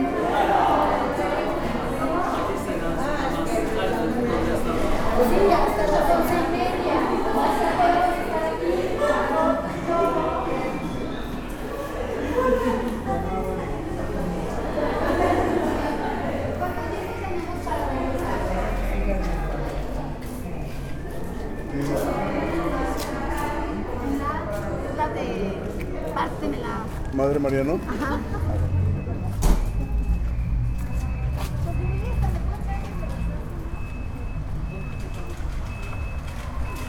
Calle Independencia, Centro, León, Gto., Mexico - En el vestíbulo del Microteatro León y saliendo a la calle.
In the lobby of the Microteatro Leon and going out to the street.
I made this recording on October 24th, 2019, at 8:58 p.m.
I used a Tascam DR-05X with its built-in microphones and a Tascam WS-11 windshield.
Original Recording:
Type: Stereo
En el vestíbulo del Microteatro León y saliendo a la calle.
Esta grabación la hice el 24 de octubre 2019 a las 20:58 horas.